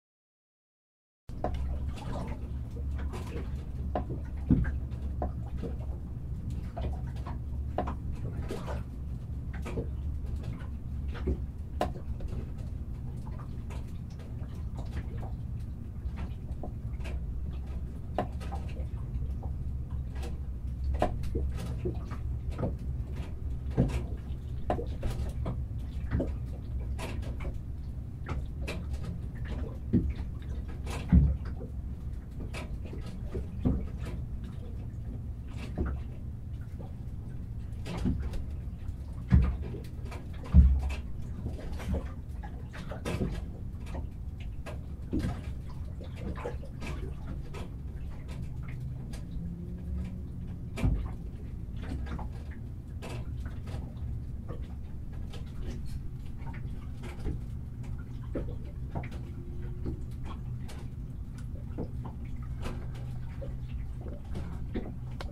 Inside a yacht at northcote point